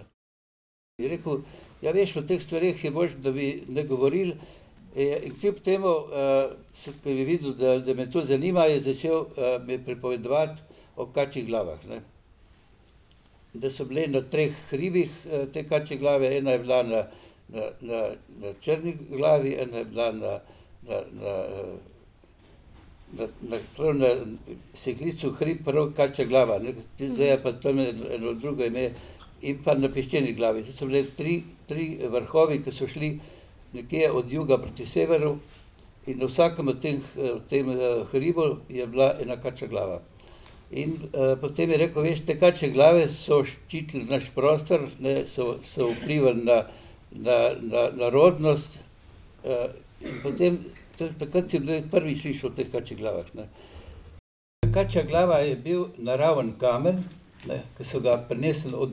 Občina Idrija, Slovenija - Kačje glave
A story about sacred stones
Čepovan, Slovenia, 19 January 2015